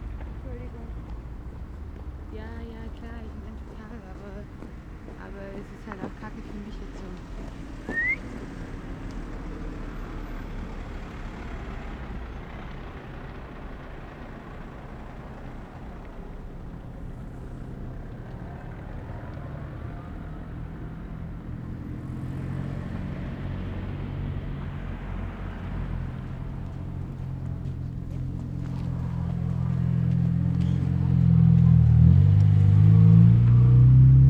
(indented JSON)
{
  "title": "Berlin: Vermessungspunkt Maybachufer / Bürknerstraße - Klangvermessung Kreuzkölln ::: 17.12.2010 ::: 18:03",
  "date": "2010-12-17 18:03:00",
  "latitude": "52.49",
  "longitude": "13.43",
  "altitude": "39",
  "timezone": "Europe/Berlin"
}